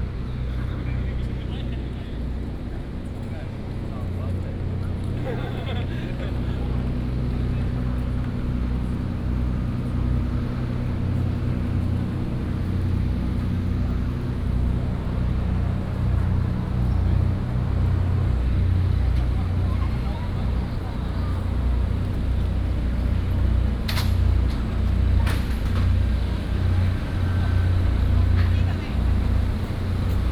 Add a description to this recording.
Walking along the outside of the stadium, Noise Generator and TV satellite trucks noise